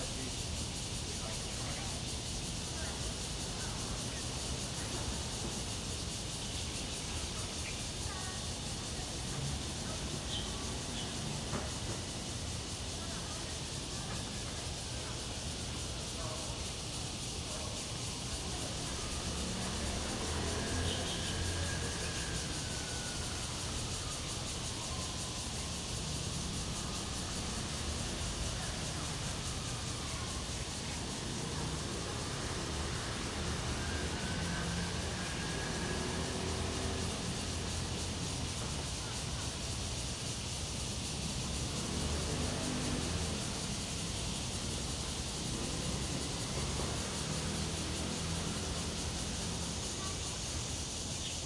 新龍公園, Da'an District, Taipei City - Cicadas and Birds sound
in the Park, Cicadas cry, Bird calls, Traffic Sound
Zoom H2n MS+XY